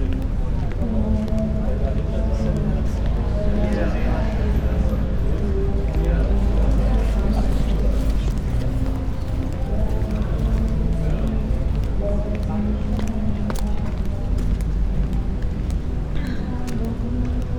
head of an island, arcades, Mitte, Berlin, Germany - walking, clogs
river traffic and another light turn of S-bahn train tracks ... seems only curves in the city are train and river curves ... rain starts
Sonopoetic paths Berlin